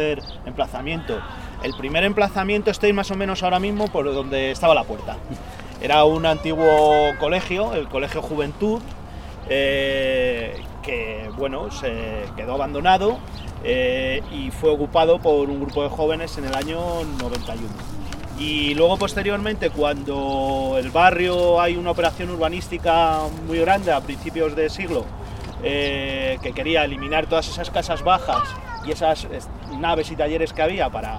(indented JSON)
{
  "title": "Adelfas, Madrid, Madrid, Spain - Pacífico Puente Abierto - Transecto - 02 - Calle Seco",
  "date": "2016-04-07 18:50:00",
  "description": "Pacífico Puente Abierto - Transecto - Calle Seco",
  "latitude": "40.40",
  "longitude": "-3.67",
  "altitude": "607",
  "timezone": "Europe/Madrid"
}